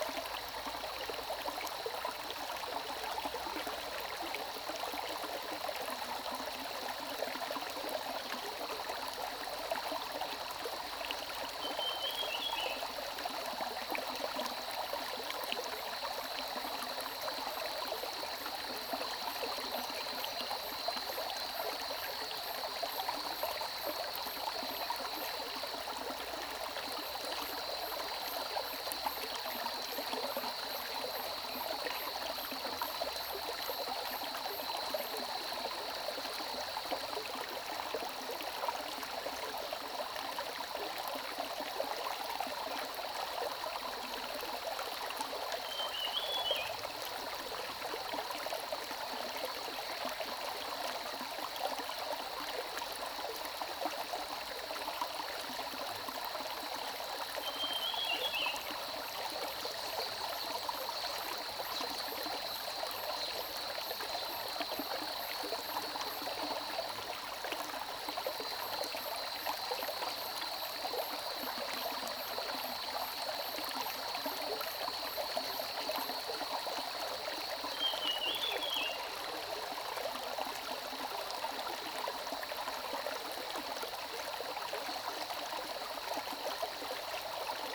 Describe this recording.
Streams and birdsong, The sound of water streams, Zoom H2n MS+XY